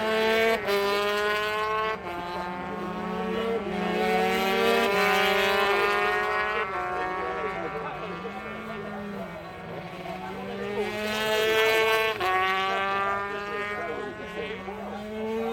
Unit 3 Within Snetterton Circuit, W Harling Rd, Norwich, United Kingdom - british superbikes 2006 ... 125 ...
briish superbikes 2006 ... 125 qualifying ... one point stereo mic to mini disk ... date correct ... time not ...